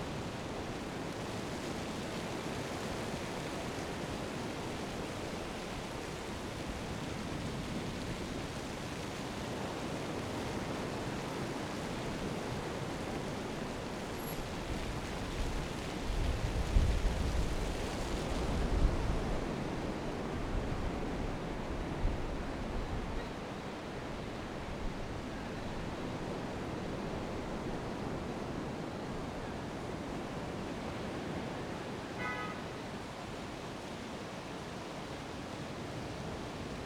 The sea and the fountain